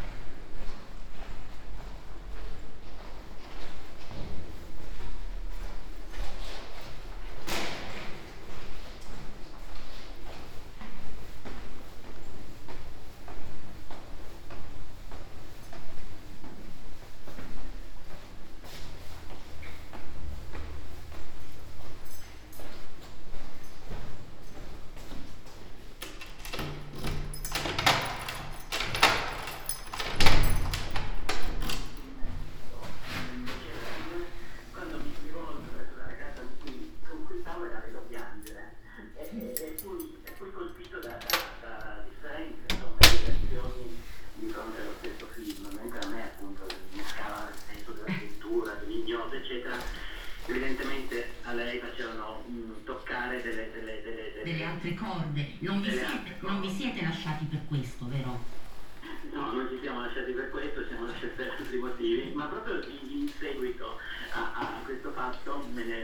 Ascolto il tuo cuore, città. I listen to your heart, city. Several chapters **SCROLL DOWN FOR ALL RECORDINGS** - “Outdoor market in the square at the time of covid19” Soundwalk
“Outdoor market in the square at the time of covid19” Soundwalk
Chapter LIV of Ascolto il tuo cuore, città. I listen to your heart, city.
Thursday April 23rd 2020. Shopping in the open air square market at Piazza Madama Cristina, district of San Salvario, Turin, fifty four days after emergency disposition due to the epidemic of COVID19.
Start at 11:27 a.m., end at h. 11:59 a.m. duration of recording 22’10”
The entire path is associated with a synchronized GPS track recorded in the (kml, gpx, kmz) files downloadable here:
Piemonte, Italia, 23 April